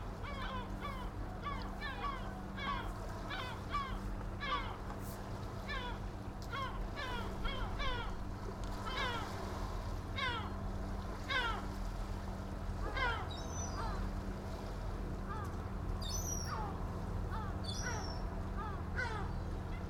from/behind window, Novigrad, Croatia - early morning preachers